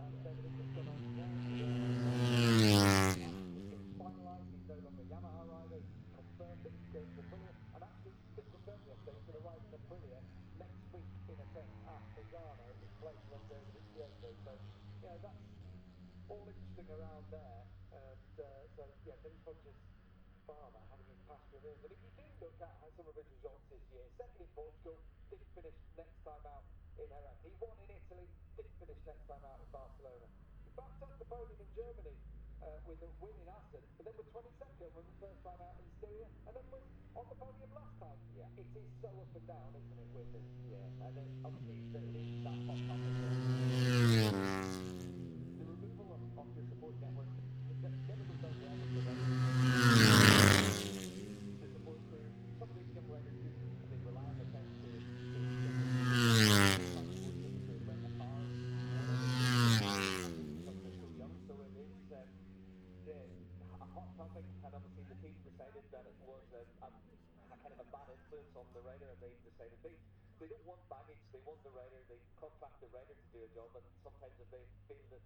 Silverstone Circuit, Towcester, UK - british motorcycle grand prix ... 2021
moto three free practice one ... maggotts ... dpa 4060s to MixPre3 ...
27 August 2021, 09:00